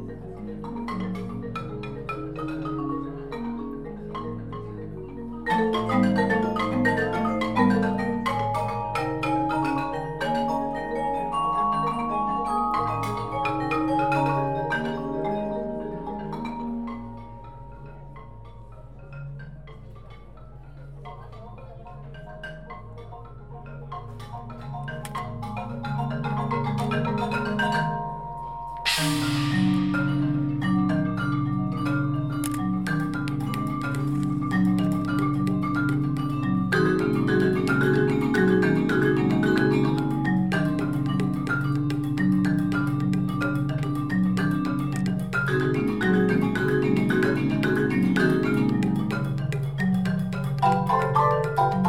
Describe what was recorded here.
Bumerang band (Zagreb, HR), gig. You can hear marimbas and various percussion instrument in a medieval solid rock amphitheater with a wooden roof. recording setup:omni, Marantz PMD 620 - portable SD/SDHC card recorder